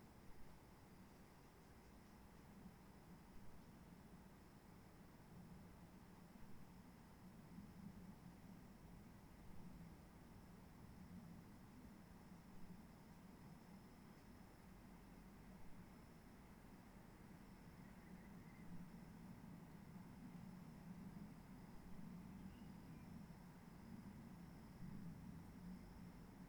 Roche Merveilleuse, Réunion - Calme de la forêt sans le tourisme héliporté (matin)
Les oiseaux ont encore l'habitude de ne pas être actifs aux horaires habituellement occupé par les hélicoptères.